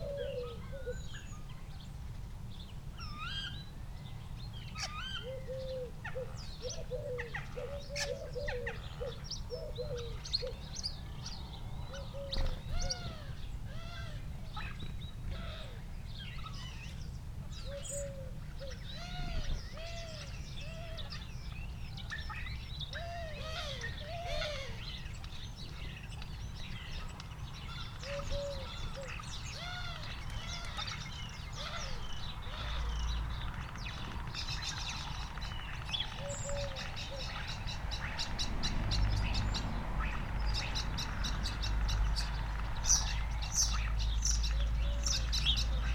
Luttons, UK - starling calls soundscape ...
starling calls soundscape ... purple panda lavaliers clipped to sandwich box to olympus ls 14 ... crow ... collared dove ... house sparrow ... blackbird ... dunnock ... robin ... wren ... blue tit ... jackdaw ... recorded close to bird feeders ... background noise ...
Helperthorpe, Malton, UK, 26 December 2019